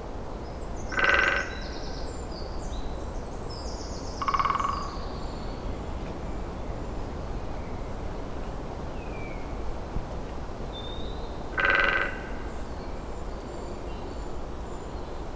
Morasko nature reserve, northerneast part - panned woodpeckers
two woodpekcer sitting very high, rapidly knocking their beaks against the tree trunk by turns.